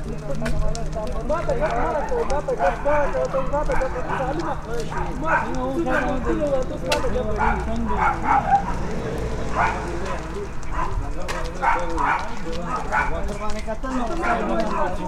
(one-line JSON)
{"title": "Thalgau, Austria - Walking with refugees I", "date": "2016-09-09 14:40:00", "description": "A group of refugees during a walk from their camp to a church community for an afternoon coffee. This is a regular activity initiated by local volunteers when the first refugees arrived to Thalgau in summer 2015. At the beginning it was mainly Syrians, most of whom meanwhile got asylum and moved to other places, mainly Vienna. The ones remaining are mostly men from Afghanistan and Iraq, who recently got joined by a group from Northern Africa. According to Austria’s current asylum policy they barely have a chance to receive asylum, nevertheless the decision procedure including several interviews often takes more than a year. If they are lucky, though, they might receive subsidiary protection. Despite their everyday being dertermined by uncertainty concerning their future, they try to keep hope alive also for their families often waiting far away to join them some day.\nDuring the last year, the image of refugees walking at the roadside became sort of a commonplace in Austria.", "latitude": "47.84", "longitude": "13.23", "altitude": "567", "timezone": "Europe/Vienna"}